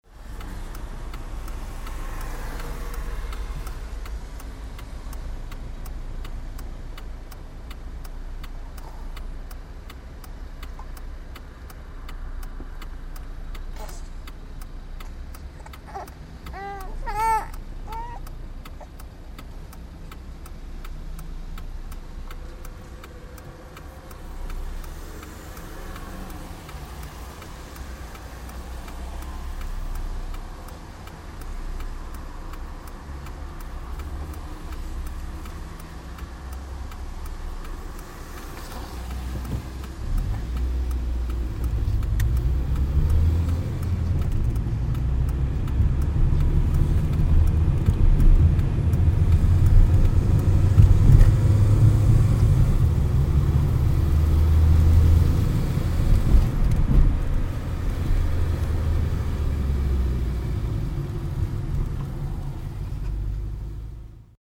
koeln, inside car at traffic light
sounds inside ford fiesta.
recorded june 20th, 2008.
project: "hasenbrot - a private sound diary"